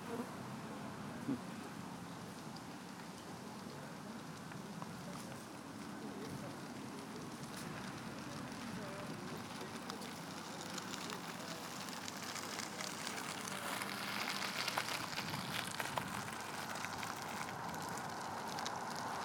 Sales Canal
Canal, boats, pedestrians, bicycles.